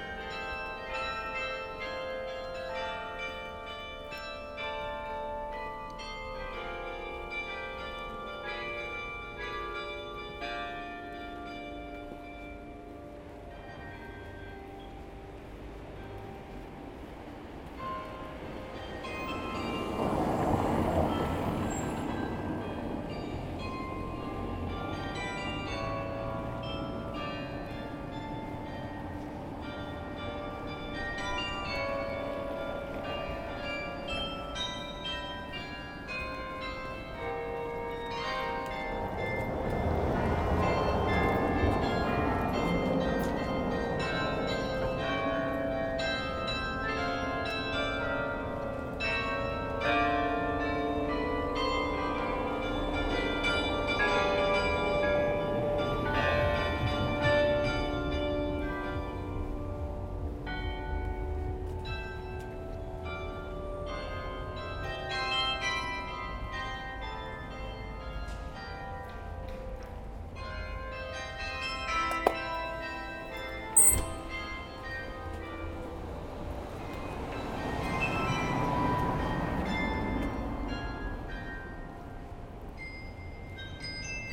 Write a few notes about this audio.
Following a person walking on the very pleasant pontoon, made in wood, over the Dijle river. Far away, the (also) pleasant sound of the OLV-over-de-Dijlekerk carillon.